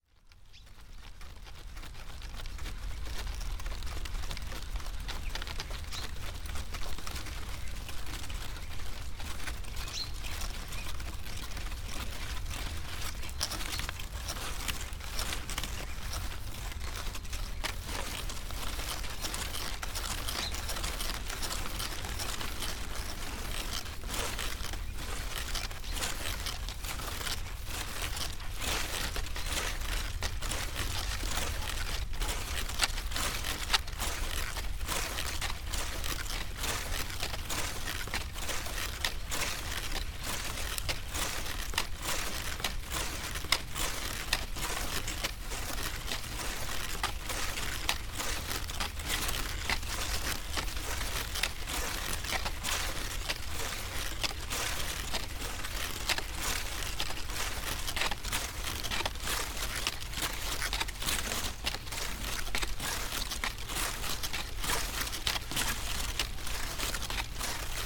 corn, Šturmovci, Slovenia - leftovers
playing with few dry corn stalks - leftovers on the edge of the field
30 September 2012, 16:30